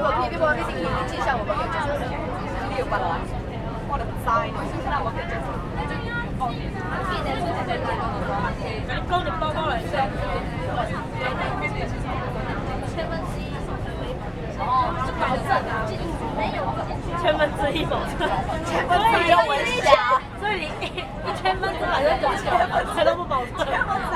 Houbi, Tainan - inside the Trains
Many students in the train, Sony ECM-MS907, Sony Hi-MD MZ-RH1